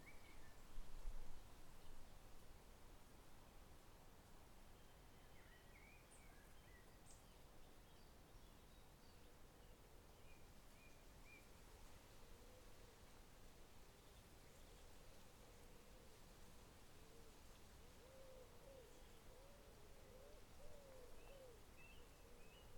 Foxley Woods, Dereham, UK - Foxley Wood by Ali Houiellebecq
Walking though dry grass to listen to the birds and the stillness of a sunny June day, with a light breeze during Lockdown in Norfolk in the UK. Recording made by sound artist Ali Houiellebecq.